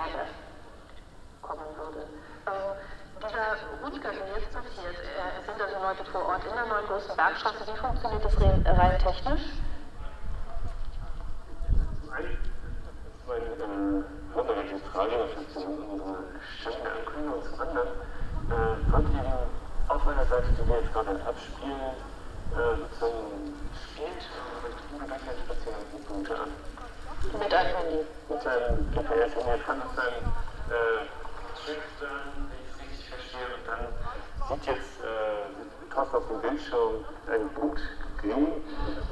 {"title": "Sendung Radio FSK/Aporee in der Großen Bergstraße. Teil 2 - 1.11.2009", "date": "2009-11-01 16:15:00", "latitude": "53.55", "longitude": "9.94", "altitude": "34", "timezone": "Europe/Berlin"}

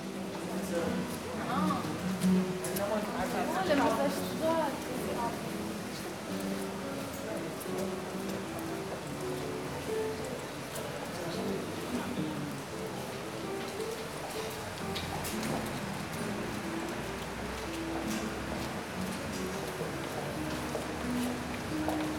{"title": "Porto, Rua de Cedofeita - guitar sketches", "date": "2013-10-01 13:16:00", "description": "young man sitting at one many cafes on the promenade, having his coffee and practicing guitar tunes.", "latitude": "41.15", "longitude": "-8.62", "altitude": "93", "timezone": "Europe/Lisbon"}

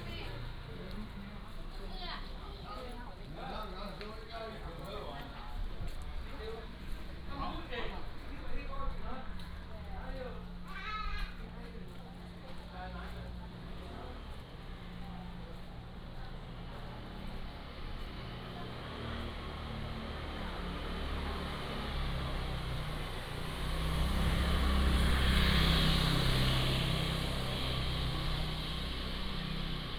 {"title": "Mofan St., Jincheng Township - Walking in the traditional alleys", "date": "2014-11-03 18:32:00", "description": "Walking in the traditional alleys, Traffic Sound", "latitude": "24.43", "longitude": "118.32", "altitude": "14", "timezone": "Asia/Taipei"}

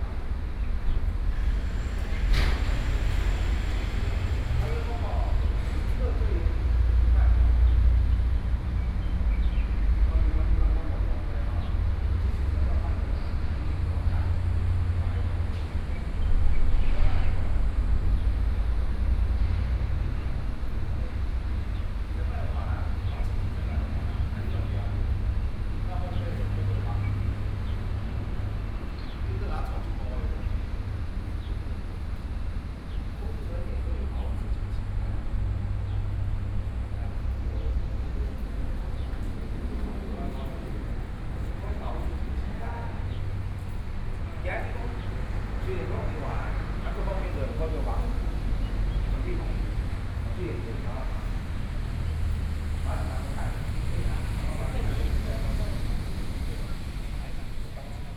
{"title": "高雄國際航空站 (KHH), Taiwan - Outside the airport", "date": "2014-05-14 09:28:00", "description": "Outside the airport, Airports near ambient sound", "latitude": "22.57", "longitude": "120.35", "altitude": "16", "timezone": "Asia/Taipei"}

